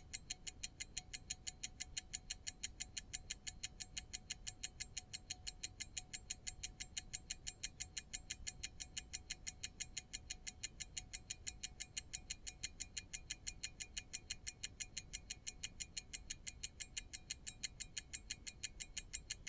Luttons, UK - a ticking pocket watch ...
a ticking pocket watch ... a wind-up skeleton watch ... contact mics to a LS 14 ...
Malton, UK, 26 February 2020